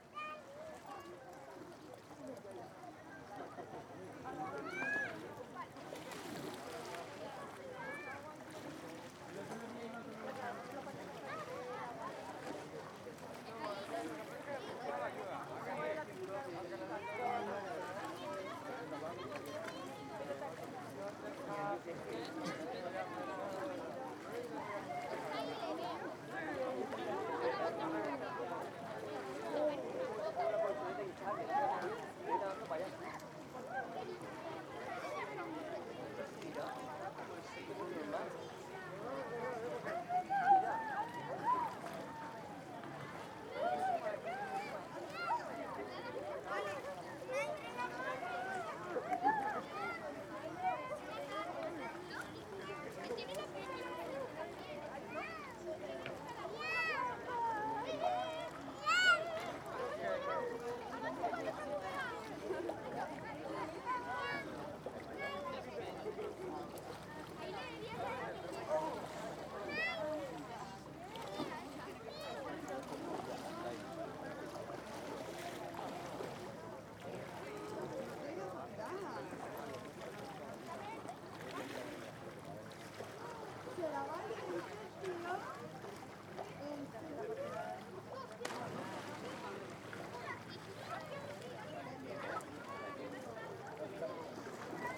Partida Ca Po-cl Portic, Alicante, Espagne - Plage de Caliete - Javea - Espagne - Ambiance.
Plage de Caliete - Javea - Espagne
Ambiance.
ZOOM F3 + AKG C451B
Alacant / Alicante, Comunitat Valenciana, España